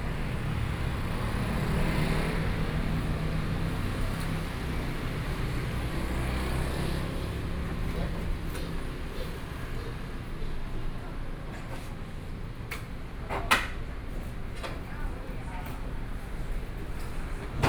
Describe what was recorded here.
In front of the supermarket, Traffic Sound